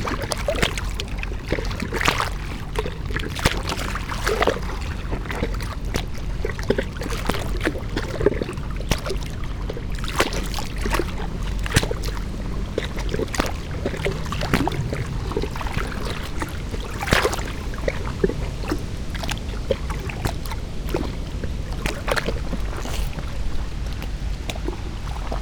Plänterwald, Berlin, Germany - river Spree, lapping waves, concrete wall, wind
Sonopoetic paths Berlin